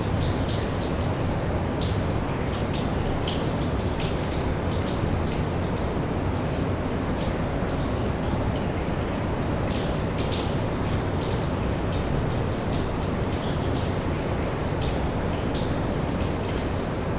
Valenciennes, France - Sewers, underground waterfall
Into the Valenciennes sewers, distant recording of an underground waterfall. Just near the waterfall, you can't hear you screaming as it's very noisy !
24 December 2018, 09:30